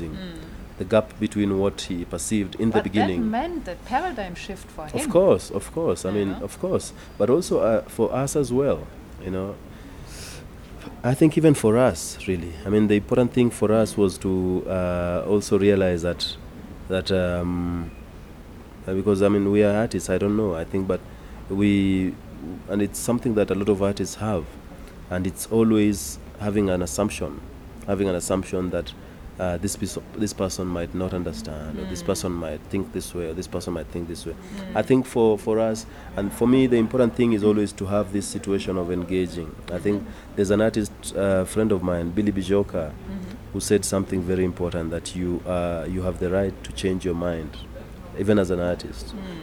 GoDown Art Centre, South B, Nairobi, Kenya - Security, Freedom and Public space…?
We are sitting with Jimmy, outside his office, in the courtyard Café of the GoDown Art Centre. The afternoon traffic on the dusty road outside the gate is relentless, and all kinds of activities going on around us; but never mind.. here we are deeply engaged in a conversation around freedom, art and public space… A day before, performance artist Ato had been arrested during her performance “Freedumb” outside the Kenya National Archives. Jimmy had been involved in the negotiations with the chief of police that followed…
“Jimmy Ogonga Jimmy is a vagrant amnesiac. from time to time, he takes photographs, makes videos, talks (negatively) too much and scribbles with the intention that someone might read his nonsense.he occupies a small white space, which he calls CCAEA, where he spends too much time. his first family is in nairobi, so chances are high that he might be there too, most of the time.”